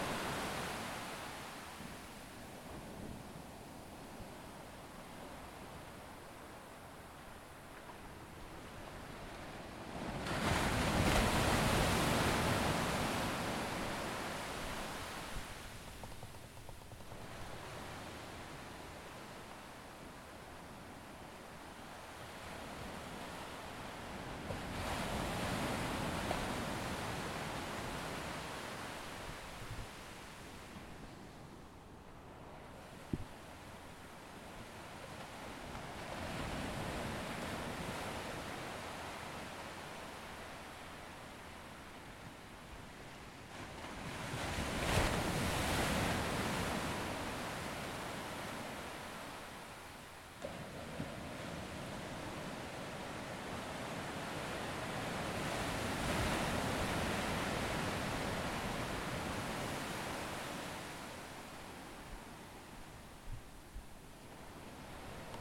Sands lookout point under a waning crescent moon. The waves were around three feet with regular sets.